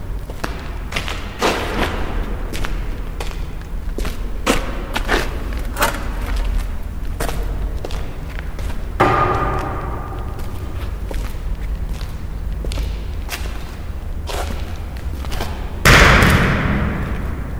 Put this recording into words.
Aus der Serie "Immobilien & Verbrechen": Abriss in Progress - Was ist von den Künstlerateliers und dem beliebten Club geblieben? Keywords: Gentrifizierung, St. Pauli, SKAM, Mojo, Tanzende Türme, Teherani, Strabag, Züblin